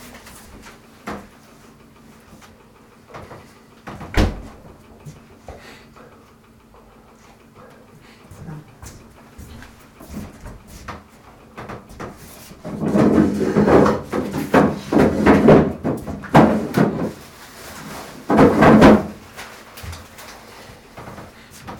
Maintenon, France - Lunch time
In the kitchen of two old persons. They are eating their lunch. Sometimes there's some long ponderous silences. I made no changes to this recording.
1 January